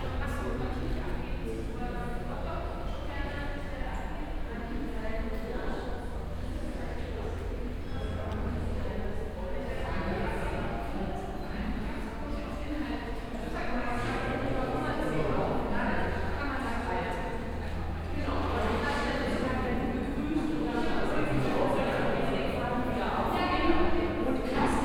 TV tower, floor - empty space
TV tower berlin, empty room 1st floor, temporary artspace, preparations for a press conference.